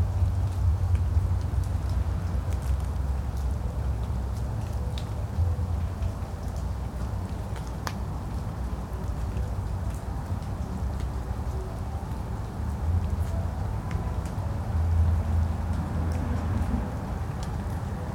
medvedova ulica, maribor, slovenia - distant music and the remains of rain
walking home along medvedova, music from a distant concert wafting through the air. recorded from the park at the end of the road, with water dripping from the trees from the day's rain.
13 June 2012, 23:13